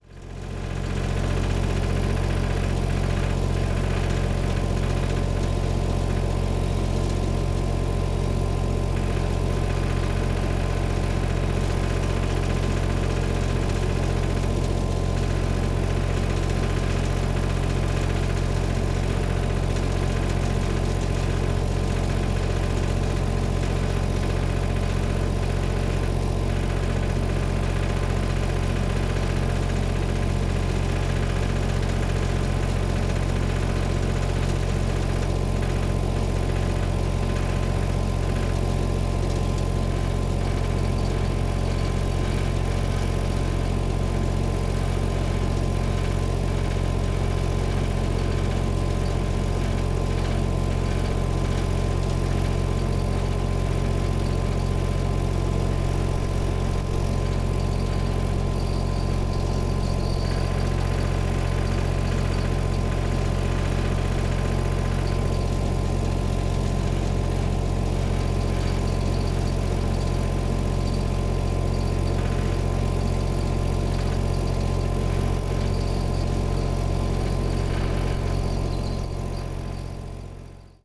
Sound from portable generator used to power lights for REAL WC soccer field.